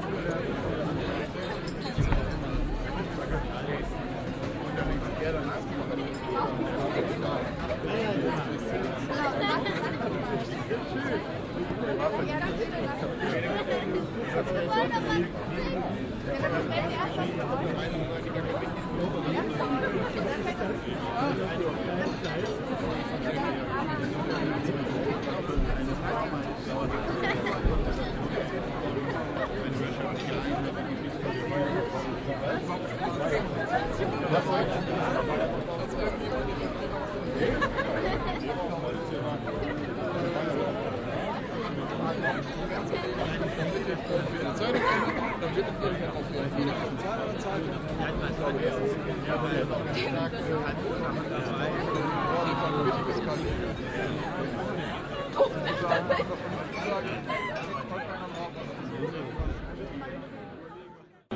osnabrück, kunsthalle dominikanerkirche, innenhof
vernissagepublikum am buffet - emaf festival 2008
project: social ambiences/ listen to the people - in & outdoor nearfield recordings